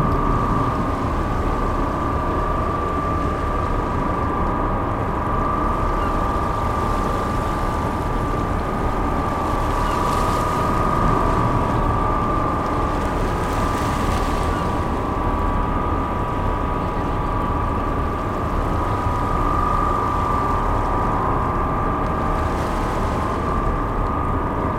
Port de Plaisance des Sablons, Saint-Malo, France - Arrival of the ferry at the Saint-Malo seaport 02
Arrival of the ferry at the Saint-Malo seaport
Nice weather, sunny, no wind, calm and quiet sea.
Recorded from the jetty with a H4n in stereo mode.
Motors from the ferry.
Machines from the ramp for passengers.
People passing by, adults and kids talking.
Ramp for passengers